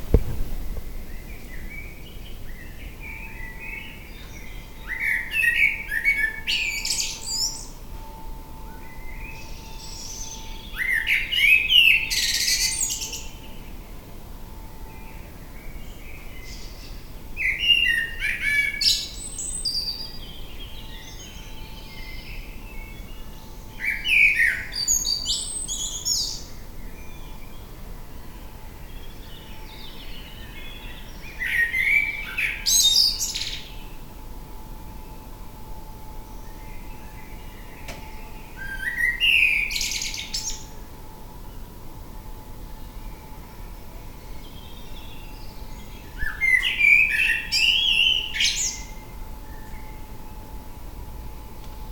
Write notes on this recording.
amsel, blackbird, vogelweide, waltherpark, st. Nikolaus, mariahilf, innsbruck, stadtpotentiale 2017, bird lab, mapping waltherpark realities, kulturverein vogelweide